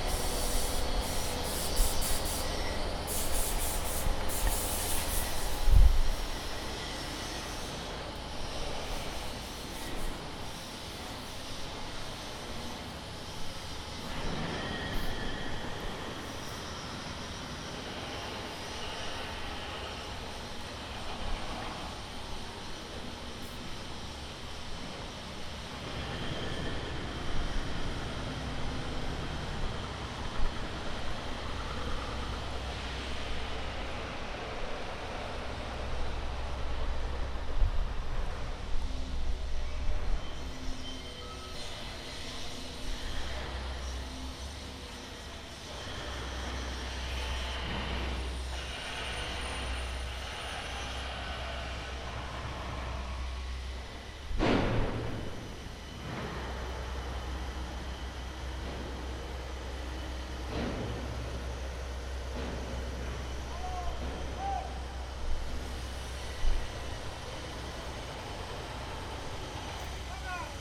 Lodz, Kilinskiego, EC1, Łódź Fabryczna